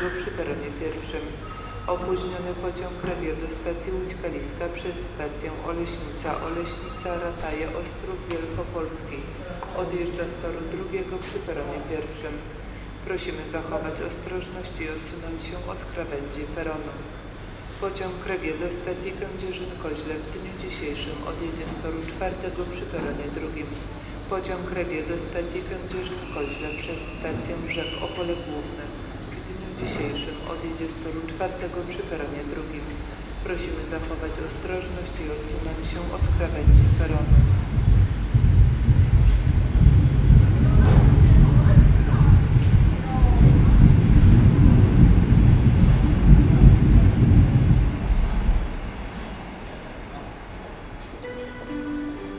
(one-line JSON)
{"title": "Railway Station, Wrocław, Poland - (63) Stations annoucements", "date": "2016-12-03 18:12:00", "description": "Station's announcements - underground.\nbinaural recording with Soundman OKM + Sony D100\nsound posted by Katarzyna Trzeciak", "latitude": "51.10", "longitude": "17.04", "altitude": "122", "timezone": "Europe/Warsaw"}